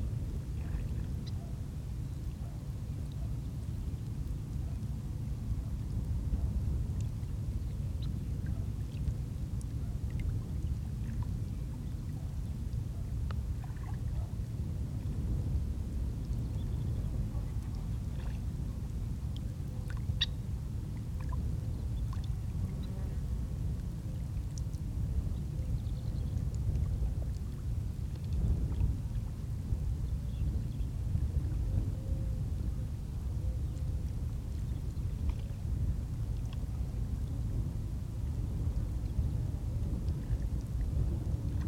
Praia do Barril, Portugal - Praia do Barril beach
Praia do Barril is a long beach island. This recording was made on the side facing land, there are no waves and as a result it's quieter than the other side. You can hear birds and small fish splashing in the water near the shore. As it is also close to Faro airport you can hear an airplane at the beginning and end of the recording.
Recorder - Zoom H4N. Microphones - pair of Uši Pro by LOM